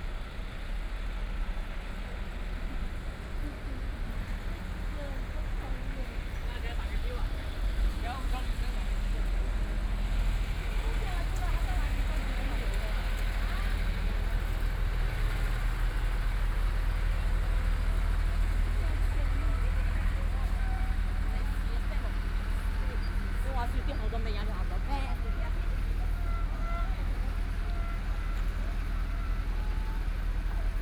{"title": "Taojiang Road, Shanghai - Walking on the street", "date": "2013-12-03 14:45:00", "description": "Follow the footsteps, Walking on the street, In the bus station, erhu sound, Construction site sounds, Binaural recording, Zoom H6+ Soundman OKM II", "latitude": "31.21", "longitude": "121.45", "altitude": "13", "timezone": "Asia/Shanghai"}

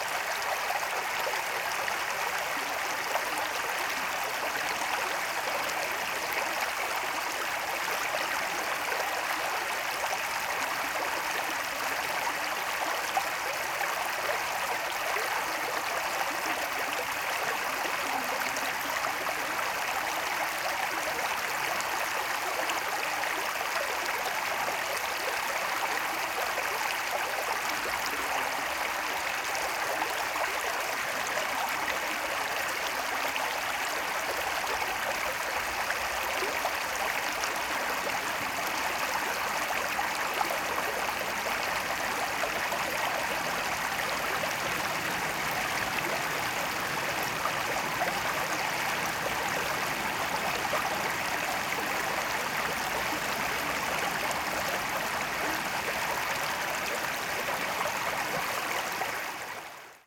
{"title": "River Poltominka-noise trees, White Sea, Russia - River Poltominka-noise trees", "date": "2014-06-10 22:06:00", "description": "River Poltominka-noise trees.\nРека Полтоминка, шум воды на перекатах, шум деревьев при порывах ветра.", "latitude": "65.19", "longitude": "39.96", "altitude": "4", "timezone": "Europe/Moscow"}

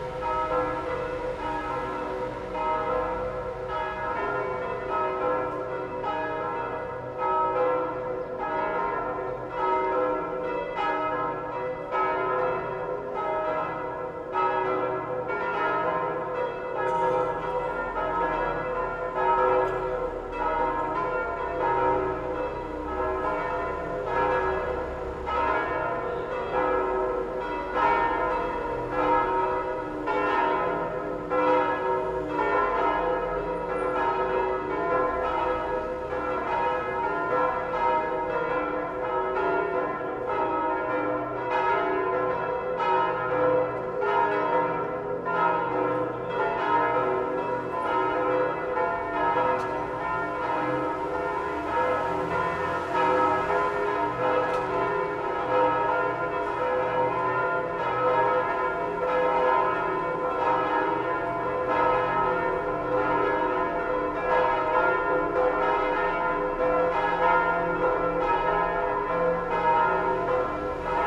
{"title": "Ménilmontant, Paris - Peal of church bells on Sunday in Paris", "date": "2016-02-21 12:00:00", "description": "On Ménilmontant street in Paris, church bells rang out inviting the faithful to the Sunday mass. at \"Église Notre Dame de La Croix\". Recorded from the window of a building in front.\nRecorded by a MS Setup Schoeps CCM41+CCM8\nOn a Sound Devices 633 Recorder\nSound Ref: FR160221T01", "latitude": "48.87", "longitude": "2.39", "altitude": "69", "timezone": "Europe/Paris"}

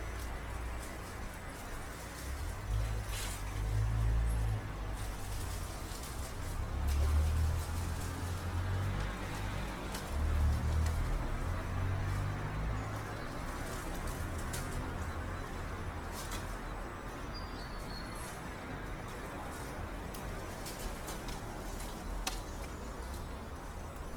{"title": "Budapest, Bajza u., Hungary - Pigeons games", "date": "2018-12-01 08:21:00", "description": "Epreskert (Mulberry Garden) inherited its name from the mulberry trees that covered the area and belongs to the Academy of Fine Arts. Epreskert consists of five buildings each containing studios and was founded as a master painter school in 1882 and has been an integral part of the Academy since 1921. Cold December morning falling leafs from mulberry trees used by flock of pigeons to exercise some strange game just above my head.", "latitude": "47.51", "longitude": "19.07", "altitude": "104", "timezone": "GMT+1"}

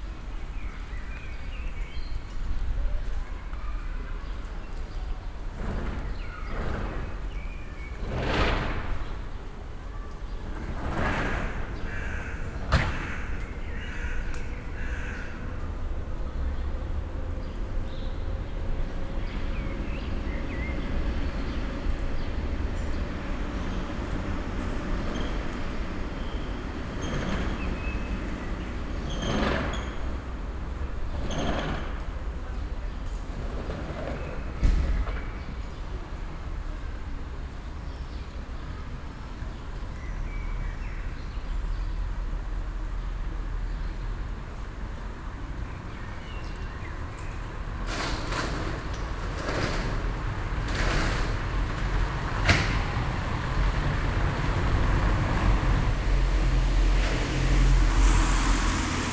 {"title": "Emserstr., Neukölln, Berlin - sunday afternoon ambience", "date": "2013-05-26 14:35:00", "description": "emserstr, corner kirchhofstr, sunday afternoon, nothing special happens.\n(Sony PCM D50, DPA4060)", "latitude": "52.47", "longitude": "13.44", "altitude": "47", "timezone": "Europe/Berlin"}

